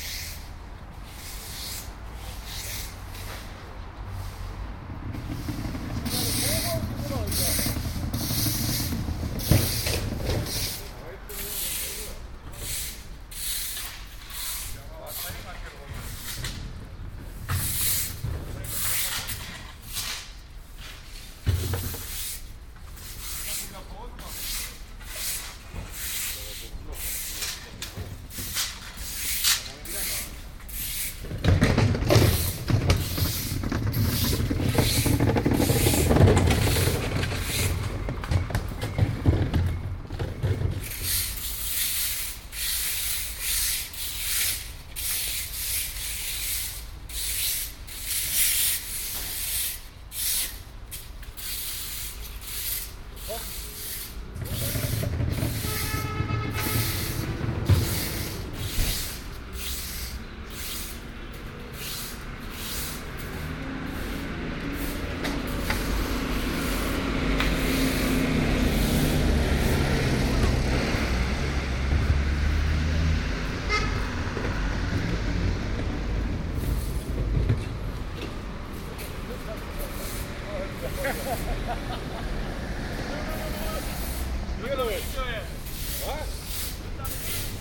Maybachufer, weekly market - cleanup after market
weekly market at maybachufer, berlin, 13.06.2008, 19:50. after the market, workers scratching resistant dirt from the streets with iron rakes.
13 June 2008, 19:50